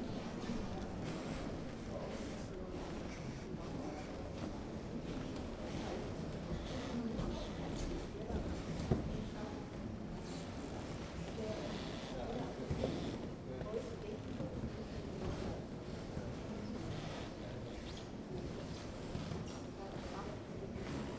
{
  "title": "Gropius Bau Berlin",
  "date": "2009-10-03 12:30:00",
  "description": "Masses of visitors at the Model Bauhaus exhibition make the wooden floor creek.",
  "latitude": "52.51",
  "longitude": "13.38",
  "altitude": "49",
  "timezone": "Europe/Berlin"
}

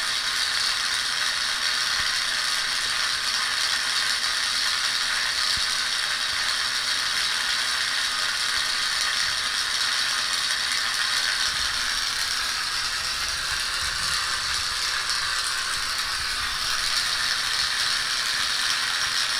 {
  "title": "University of Colorado Boulder, Regent Drive, Boulder, CO, USA - Newton court",
  "date": "2013-02-02 12:41:00",
  "latitude": "40.01",
  "longitude": "-105.26",
  "altitude": "1622",
  "timezone": "America/Denver"
}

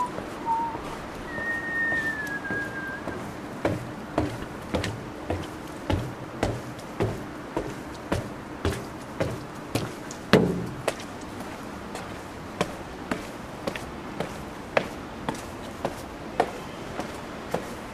{
  "title": "Sous le ciel dIstanboul",
  "date": "2010-10-17 21:28:00",
  "description": "Wind has turned and now coming from Russia, as they say, bringing the winter and some melancholic melodies. As they say as well, who whistles, is calling the devil... but some people are willing to face him for the sake of their emergent state of mind.",
  "latitude": "41.05",
  "longitude": "29.00",
  "altitude": "46",
  "timezone": "Europe/Istanbul"
}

